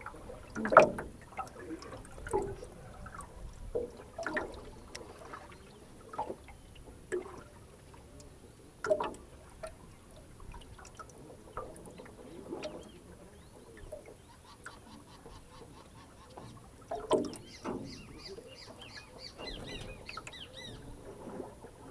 Una chalupa empujada a remo conecta el corregimiento de El Horno con el de Angostura. El capitán trabaja todos los días de 5AM a 7PM.
Magdalena, Colombia, 29 April 2022, 10:38